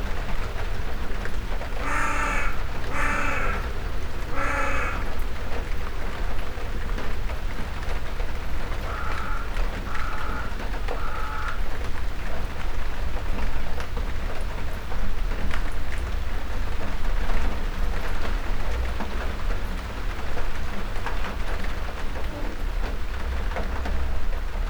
It's 5.30am and the pigeons are gone leaving the crows in charge this morning who are probably in the apple tree 20 paces away sheltering from this shower of rain.
MixPre 6 II with 2 x Sennheiser MKH 8020s well inside the garage door.
West Midlands, England, United Kingdom, 31 July 2021, 05:32